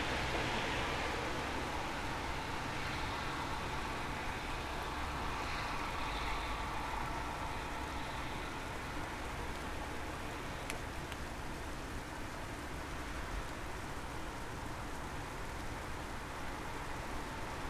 Mesaanitie, Oulu, Finland - Thunder from my window
Some distant and semi-loud thunder and rain recorded from my window. Lot's of cars driving by. Zoom H5, default X/Y module.